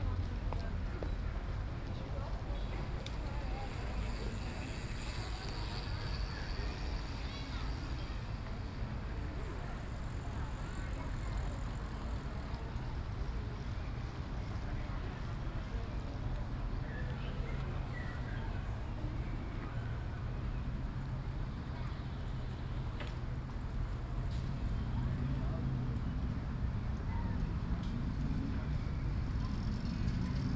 "Reading on Sunday at Valentino Park in the time of COVID19" soundscape
Chapter LXXXVI of Ascolto il tuo cuore, città. I listen to your heart, city
Sunday May 24th 2020. San Salvario district Turin, staying at Valentino park to read a book, seventy five days after (but day twenty-one of of Phase II and day ight of Phase IIB abd day two of Phase IIC) of emergency disposition due to the epidemic of COVID19.
Start at 1:18 p.m. end at 1:52 p.m. duration of recording 34’’53”
Coordinates: lat. 45.0571, lon. 7.6887
Provincia di Torino, Piemonte, Italia, 24 May 2020, 13:18